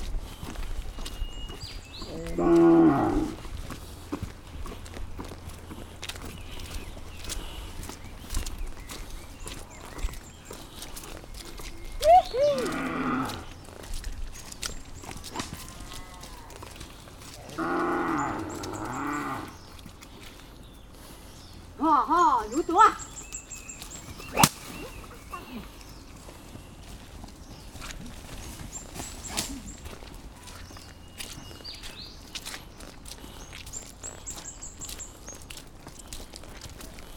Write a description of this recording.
Yangdi, Li River, farmers and cows